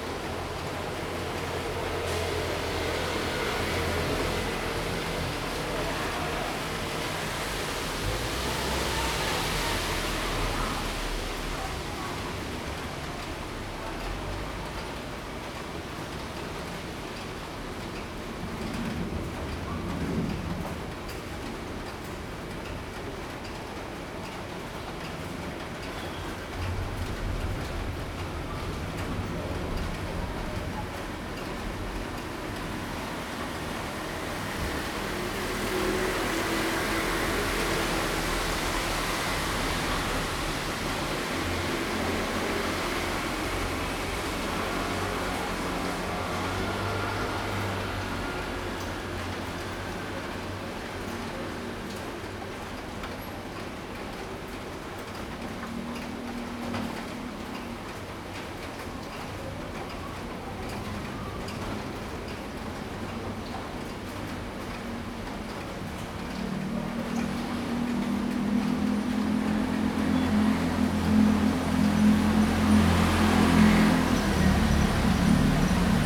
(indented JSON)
{
  "title": "大仁街, Tamsui District - Before and after the power is restored",
  "date": "2016-08-10 20:48:00",
  "description": "Before and after the power is restored, Traffic Sound, Cheers sound\nZoom H2n MS+XY +Spatial audio",
  "latitude": "25.18",
  "longitude": "121.44",
  "altitude": "45",
  "timezone": "Asia/Taipei"
}